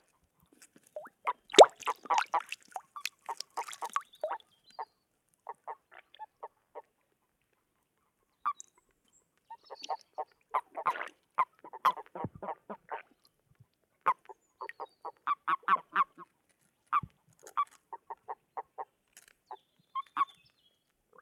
Lithuania, Stabulankiai, mating frogs
close-up recording of matings frogs